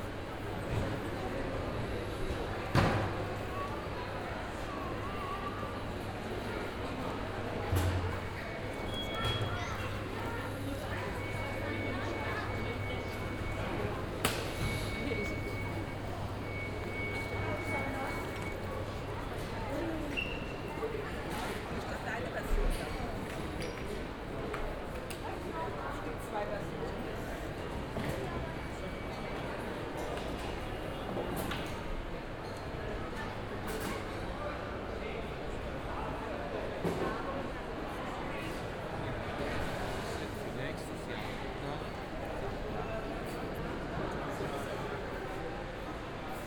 walk through Hahn airport hall. binaural, use headphones
Deutschland, 11 October 2010